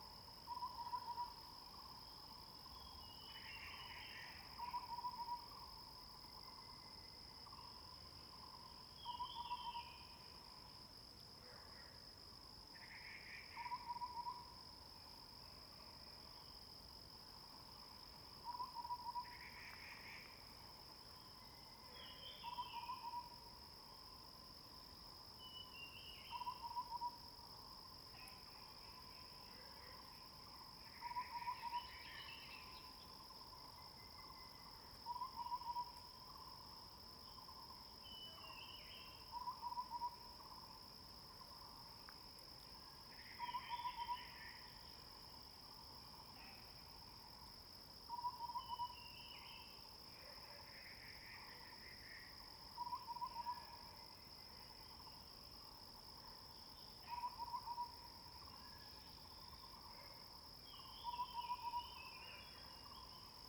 Hualong Ln., Yuchi Township - Bird and Frog sounds
Bird sounds, Frog sounds
Zoom H2n MS+XY